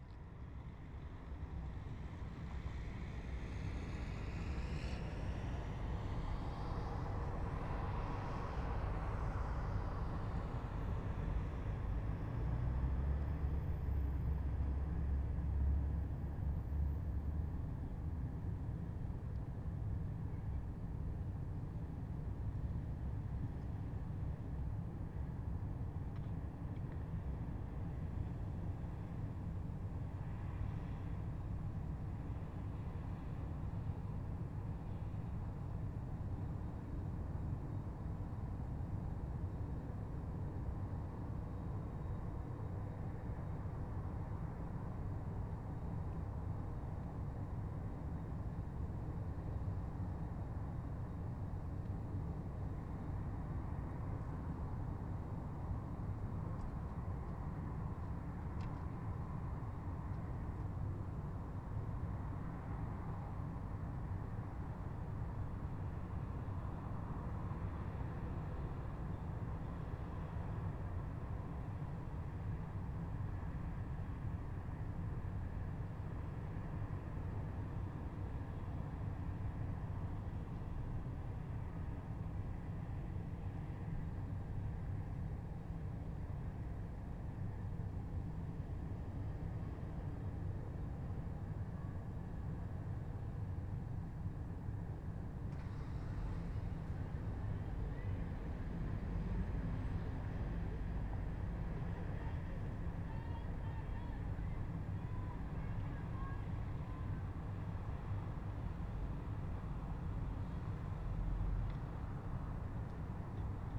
MInsk night drone from the 6th floor hotel room window
1 August 2015, 23:25